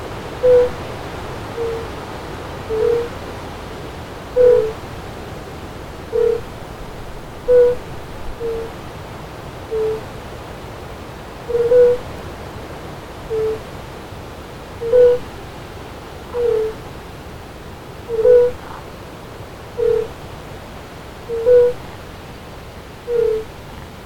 Šlavantai, Lithuania - Fire-bellied toads singing
A couple or more fire-bellied toads (Bombina bombina) singing during a windy day. Recorded with Olympus LS-10.